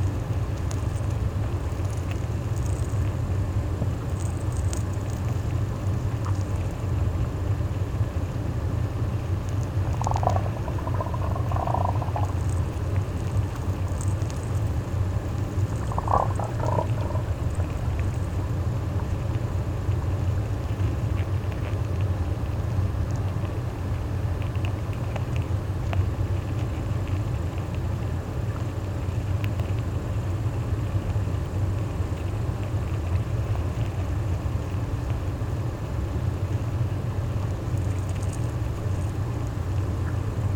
Lithuania, Nemeiksciai, the dam
another eksperimental recording of the dam: conventional microphones, hydrophone and contact mic on the ant nest - all recorded at the same time and mixed together